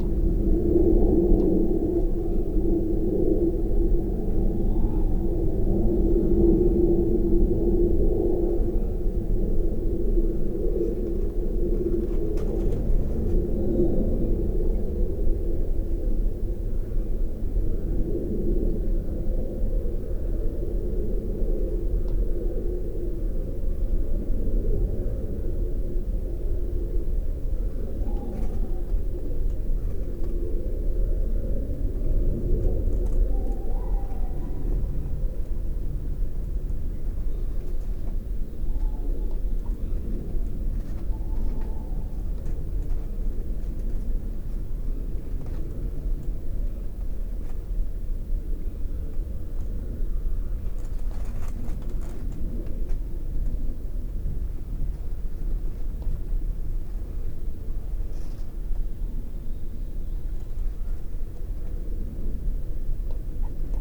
Sounds of the Night, Malvern Hills, Worcestershire, UK - Night
Natural, man-made and mysterious sounds from an overnight recording on the Malvern Hills.
MixPre 3 with 2 x Sennheiser MKH 8020s
England, United Kingdom, 9 March 2019